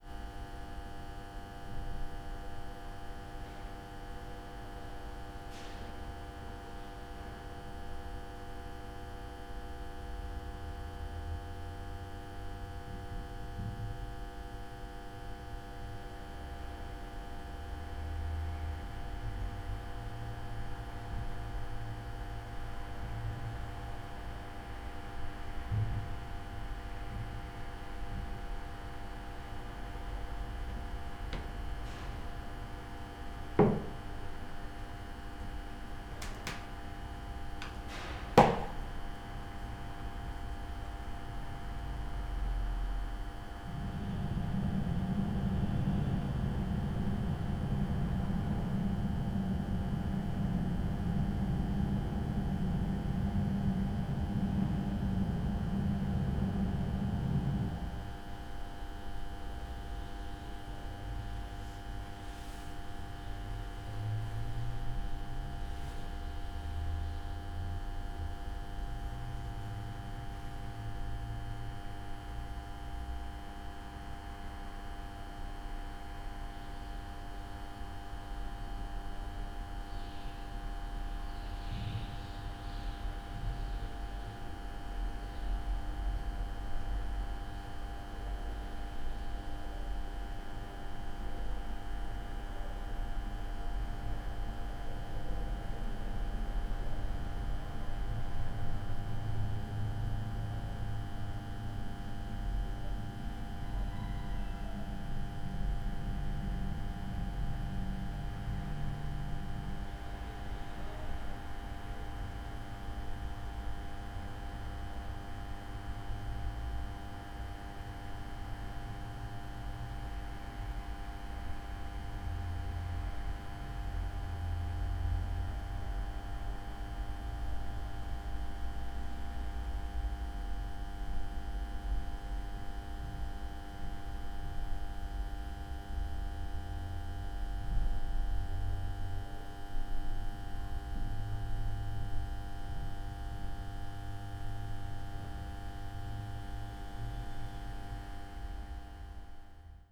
Hilbert mill, Dzierżoniów, Polen - room ambience /w electric buzzing
Dzierżoniów, Hilbert mill, former flour mill, now more of an industrial museum, ambience in a room, buzz of a fluorescent lamp
(Sony PCM D50, Primo EM172)